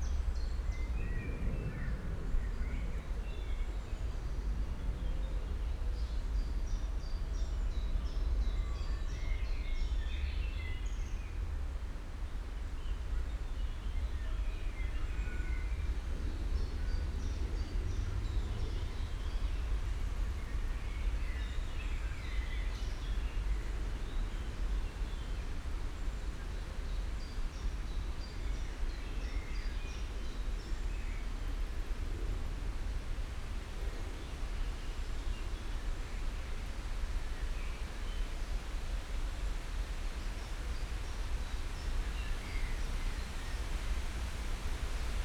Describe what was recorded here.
along the river Wuhle valley (Wuhletal, Wind in trees and amience near BVG (Berlin public transport) depot. (SD702, DPA4060)